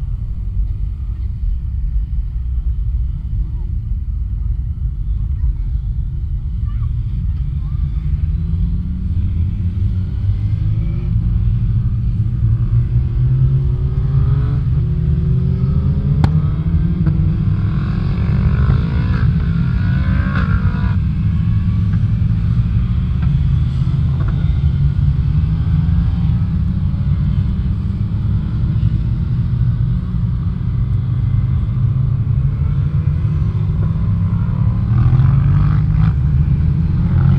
Glenshire, York, UK - Motorcycle Wheelie World Championship 2018...
Motorcycle Wheelie World Championship 2018 ... Elvington ... Standing Start 1 Mile ... open lavalier mics clipped to sandwich box ... positioned just back of the timing line finish ... blustery conditions ... all sorts of background noise ...